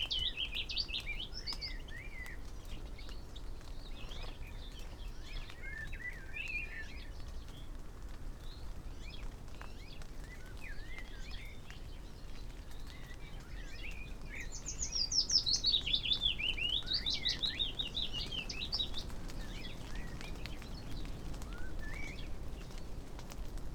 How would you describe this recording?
willow warbler soundscape ... with added moisture ... foggy morning ... moisture dripping from trees ... skywards pointing xlr SASS to Zoom H5 ... starts with goldfinch song ... then alternates and combines willow warbler and blackbird song as they move to different song posts and return ... bird song ... calls from ...chaffinch ... wood pigeon ... whitethroat ... song thrush ... pheasant ... yellowhammer ... skylark ... wren ... linnet ... background noise ...